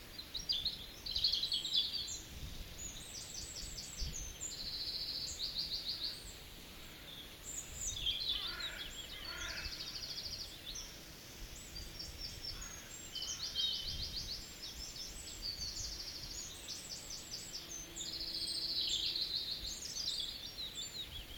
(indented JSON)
{
  "title": "Swindale Ln, Penrith, UK - Birds, Swindale",
  "date": "2022-05-05 18:05:00",
  "description": "Birds calling close and from a distance. Zoom H2N",
  "latitude": "54.51",
  "longitude": "-2.76",
  "altitude": "287",
  "timezone": "Europe/London"
}